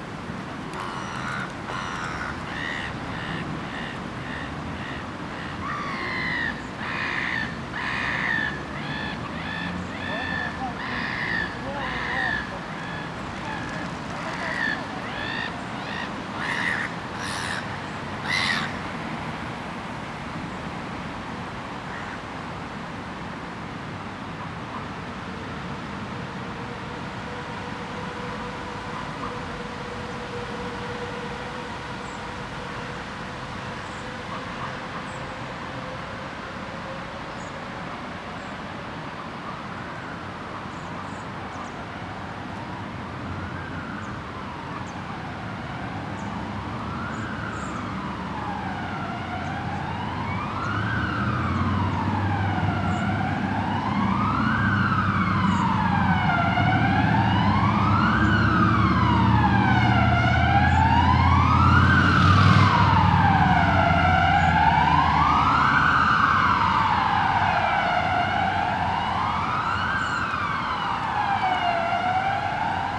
Parc Louise-Marie, Namur, Belgique - Windy day park ambience near the pond
Ducks, seagulls, sirens.
Tech Note : Sony PCM-D100 internal microphones, wide position.